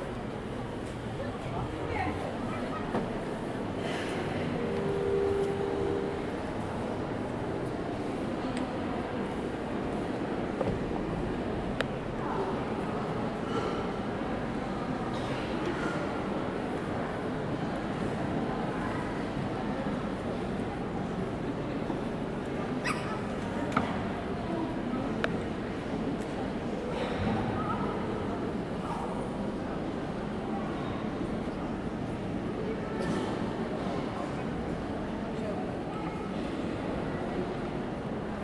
Paulus Kirche, Hamm, Germany - in front of the church walking in...
just before the concert performance of Cota Youth Choir in front of the city church, the walking in….
all tracks archived at